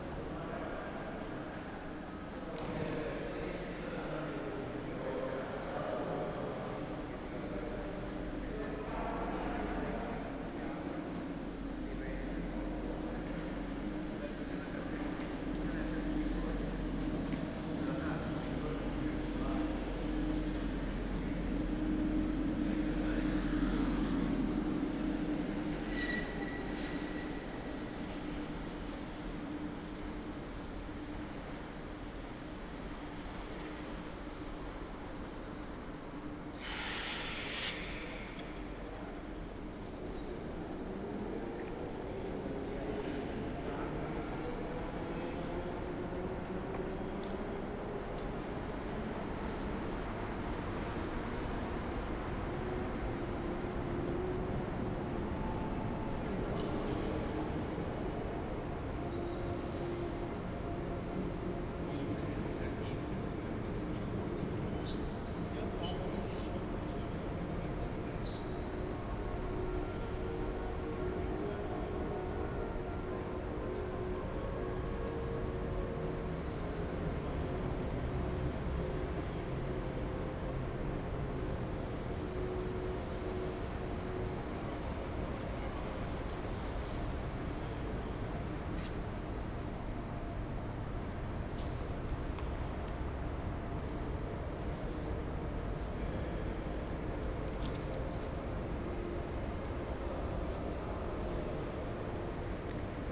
Ascolto il tuo cuore, città. I listen to your heart, city. Several chapters **SCROLL DOWN FOR ALL RECORDINGS** - “Walking in a rainy day at the time of covid19” Soundwalk
“Walking in a rainy day at the time of covid19” Soundwalk
Chapter XXIV of Ascolto il tuo cuore, città. I listen to your heart, city.
Friday March 27 2020. Walk to Porta Nuova railway station and back, San Salvario district, seventeen days after emergency disposition due to the epidemic of COVID19.
Start at 11:25 a.m., end at h. 00:01 p.m. duration of recording 36’11”
The entire path is associated with a synchronized GPS track recorded in the (kml, gpx, kmz) files downloadable here: